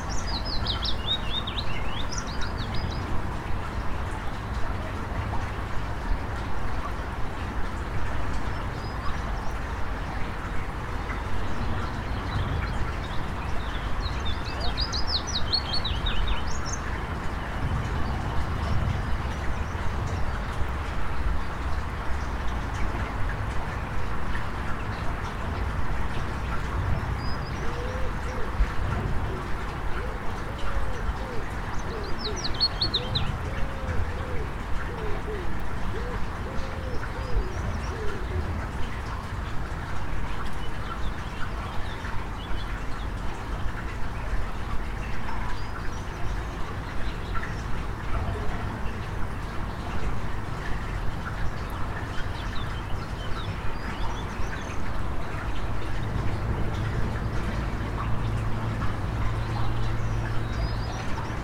Teatralna, Gorzów Wielkopolski, Polska - Little stream near the Warta river
Little stream near the Warta river.